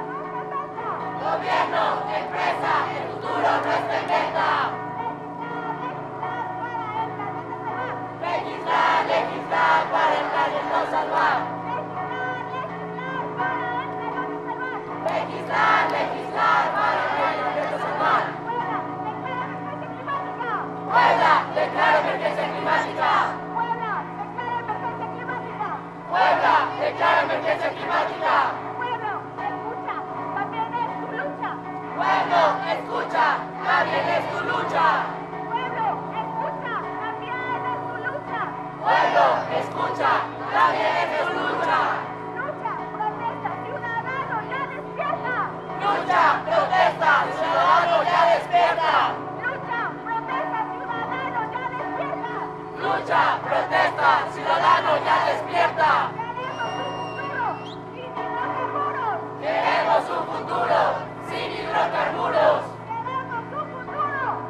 Av. Juan de Palafox y Mendoza, Centro histórico de Puebla, Puebla, Pue., Mexique - "No Hay Planeta B" - Puebla 2019
Puebla (Mexique)
Sur la place Central (El Zocalo) des étudiants manifestent pour le respect et la protection de la planète.
21 September 2019, 17:00